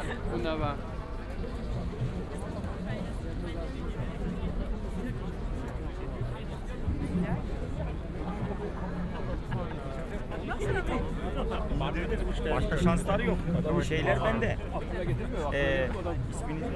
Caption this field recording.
some sounds from a demonstration against a recent weird right wing movement, which tried to gather in Cologne, unsuccessfully, (Sony PCM D50, OKM2)